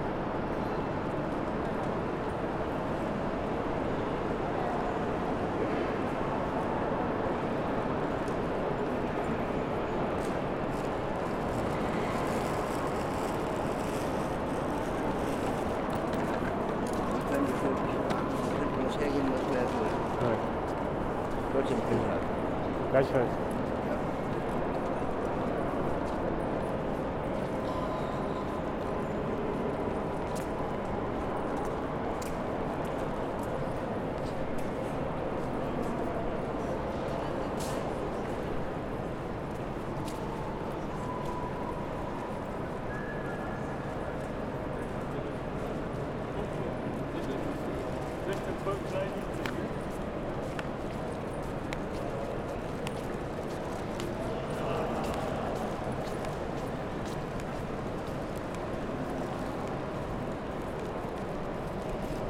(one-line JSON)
{"title": "Frankfurt Hauptbahnhof 1 - Halle 24. April 2020", "date": "2020-04-24 15:30:00", "description": "In the hall on the 24th of April there are more voices than the last time, more people are going through the hall into the station. Is this audible? In the end of this recording a beggar is asking for money. I will meet him again at the airport, something like one hour later...", "latitude": "50.11", "longitude": "8.66", "altitude": "110", "timezone": "Europe/Berlin"}